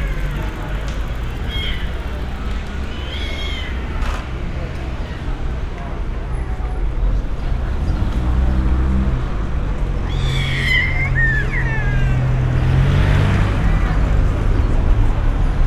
Berlin: Vermessungspunkt Friedelstraße / Maybachufer - Klangvermessung Kreuzkölln ::: 04.06.2013 ::: 18:14